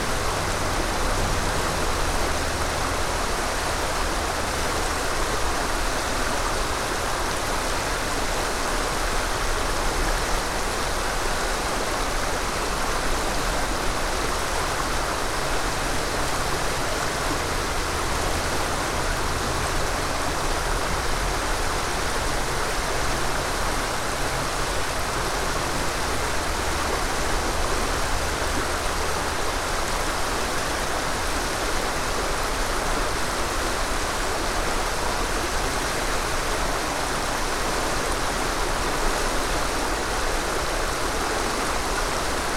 Powers Island Hiking Trail, Sandy Springs, GA, USA - Rushing River
Another recording along Powers Island Trail. This time the recorder is a little closer to the water. There's a bit of traffic in the background, but it's mostly covered by the sound of the water. There are geese calling in parts of the recording. The sounds were captured by clipping the mics to a tree.
[Tascam DR-100mkiii & Clippy EM-272 omni mics]